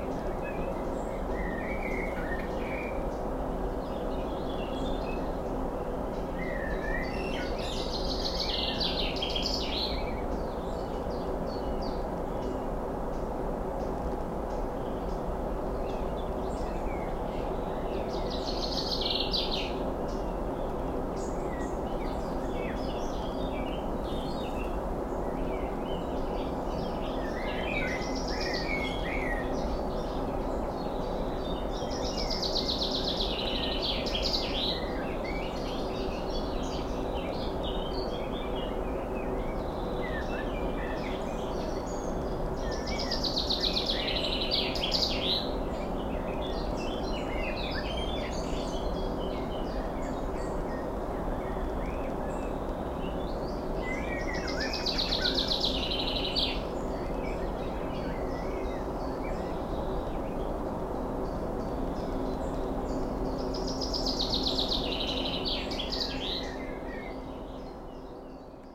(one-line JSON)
{"title": "opencast Hambach, Niederzier, Germany - ambience, birds and hum", "date": "2013-07-02 20:05:00", "description": "at the southern edge of opencast (Tagebau) Hambach, near village Niederzier, ambience at a former road, which now stops at a stripe of dense vegetation. hum of distant machinery.\n(Sony PCM D50)", "latitude": "50.89", "longitude": "6.47", "altitude": "118", "timezone": "Europe/Berlin"}